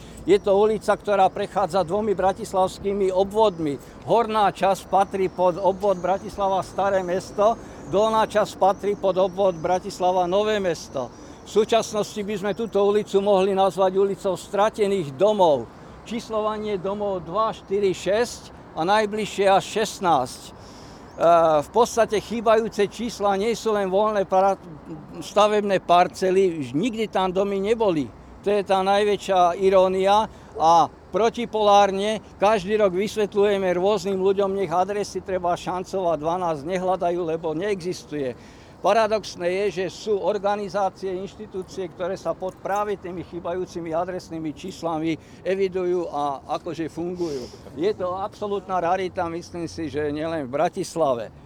Unedited recording of a talk about local neighbourhood.
Bratislava, Slovakia, 13 June 2016